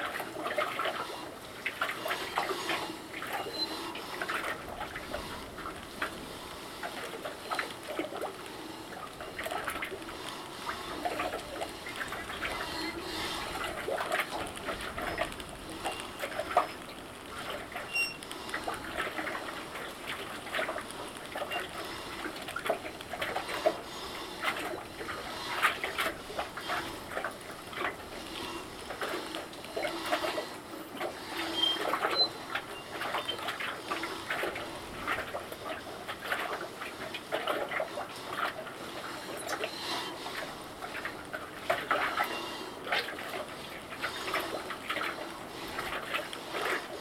Baltimore, MD, USA - Canton Waterfront
Sittin on the dock of the bay